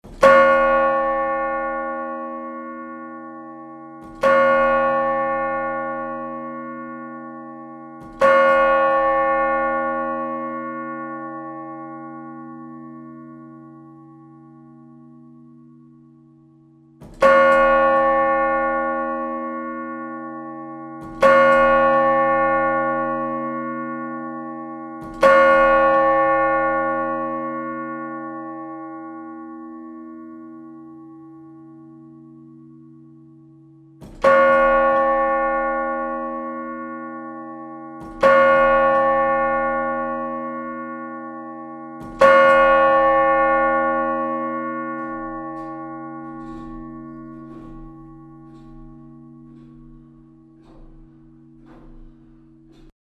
ratingen, kirche peter + paul, dicke märch - ratingen, kirche peter + paul, mittagsglocken
mittagsglocken
soundmap nrw:
social ambiences/ listen to the people - in & outdoor nearfield recordings